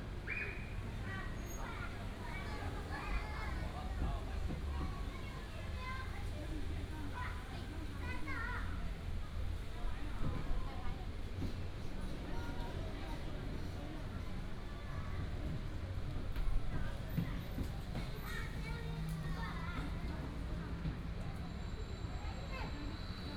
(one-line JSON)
{"title": "大同公園, Datong Dist., Taipei City - in the Park", "date": "2017-04-10 17:49:00", "description": "in the Park, Child, The plane flew through, Traffic sound", "latitude": "25.07", "longitude": "121.51", "altitude": "8", "timezone": "Asia/Taipei"}